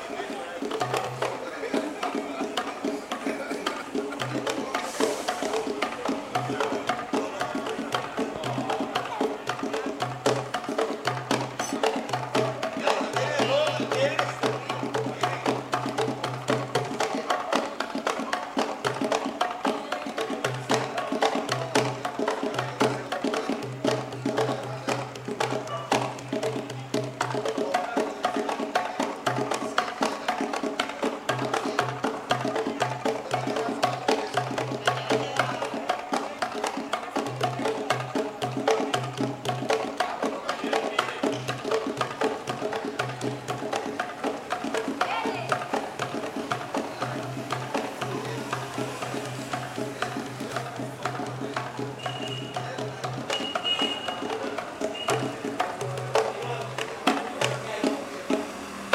Santiago de Cuba, ensayo de tambores